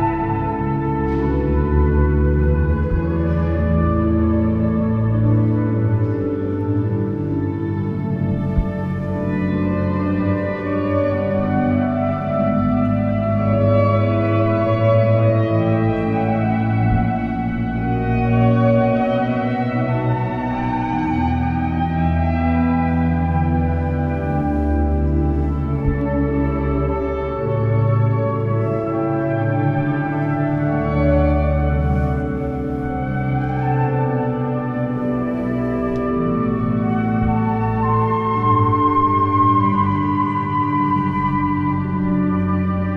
Bonn, Münster / Cathedral, Letzter Soundcheck vor Hochzeit / Last Soundcheck before wedding